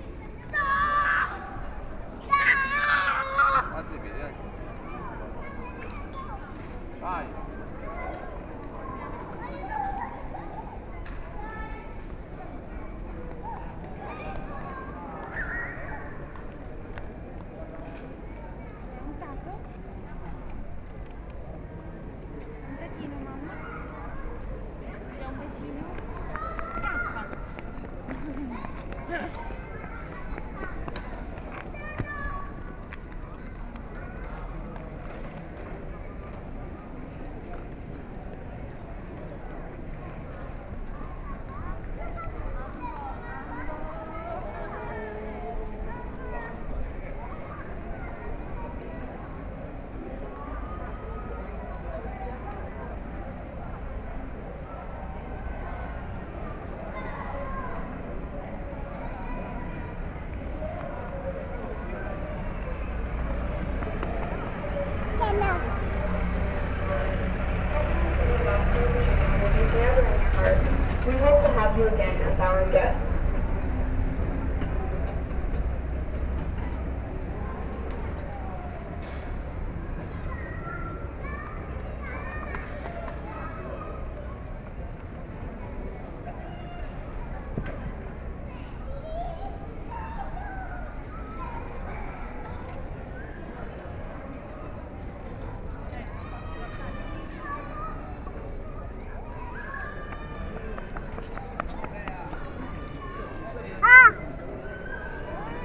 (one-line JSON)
{"title": "Ascoli Piceno AP, Italia - wld Piazza Arringo", "date": "2013-07-18 18:41:00", "description": "Piazza Arringo, one of the city's main squares, people stroll and relax\nedirol R-09HR", "latitude": "42.85", "longitude": "13.58", "altitude": "157", "timezone": "Europe/Rome"}